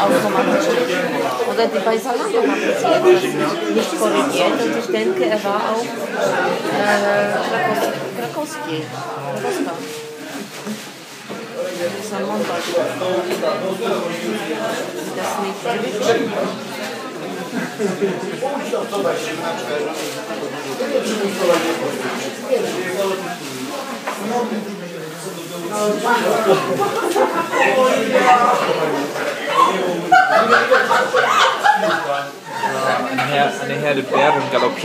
Lots of laughter - people are happy because the sun came out first time this winter.